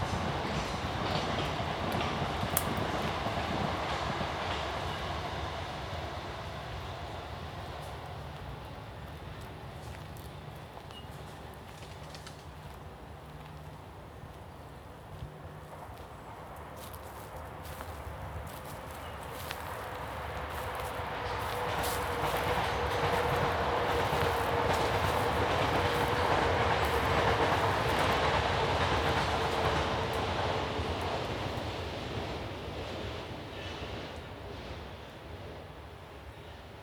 Palace View, London, UK - Train Tracks and Early Autumn at the Grove Park Nature Reserve
This recording was made at the Grove Park Nature Reserve. Passing trains at the nearby Hither Green junction provide a clickety-clack background to frolicking squirrels, squeaking gates and wailing children. Recorded on a ZOOMQ2HD
2 September